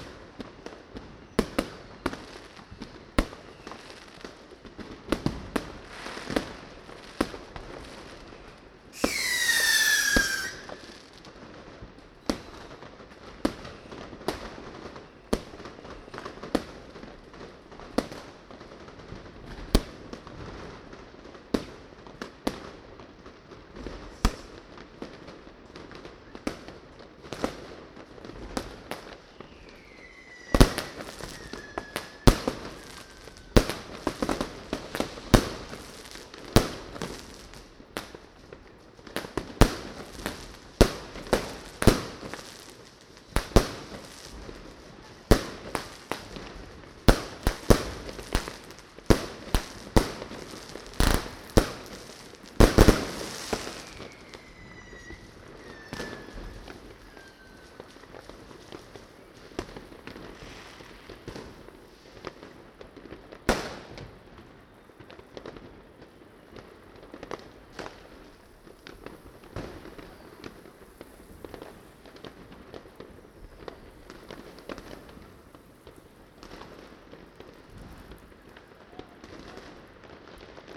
New Year celebration with fireworks.
Zoom H2 recorder with SP-TFB-2 binaural microphones.